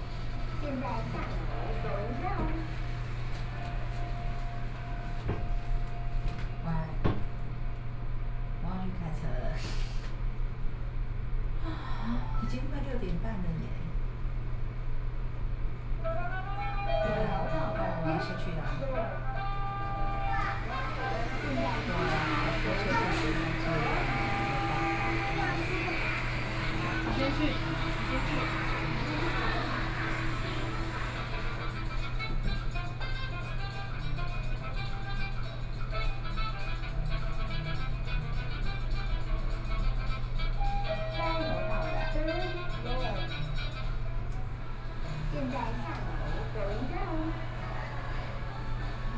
Living Mall京華城, Taipei City - Elevator
In the Elevator, Sony PCM D50 + Soundman OKM II